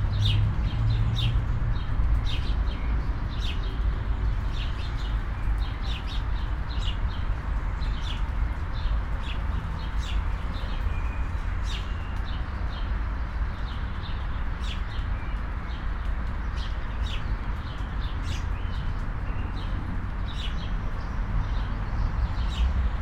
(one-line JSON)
{"title": "Alt-Treptow, Berlin, Germany - under tree crown", "date": "2013-05-26 15:13:00", "description": "under tree crown ambiance with rain drops, leaves, birds, traffic ...", "latitude": "52.49", "longitude": "13.46", "altitude": "34", "timezone": "Europe/Berlin"}